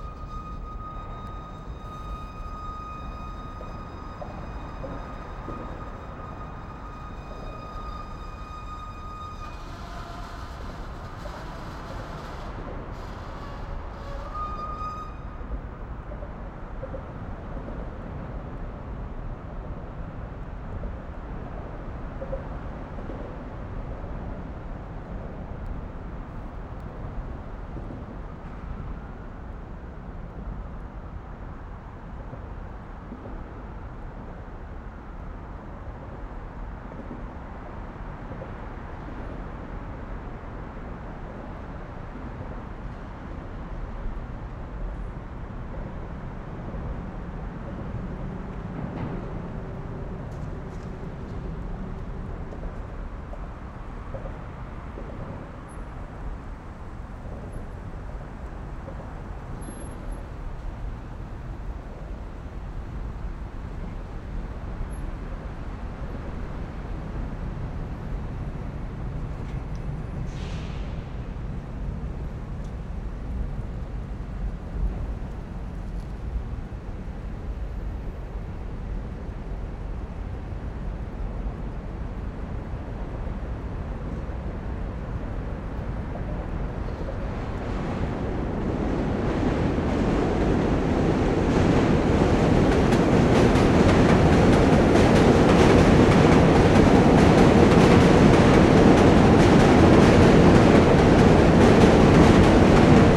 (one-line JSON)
{"title": "New York Manhattan Bridge", "date": "2008-03-31 16:54:00", "description": "Metro sound under the Manhattan bridge in NYC", "latitude": "40.70", "longitude": "-73.99", "altitude": "3", "timezone": "America/New_York"}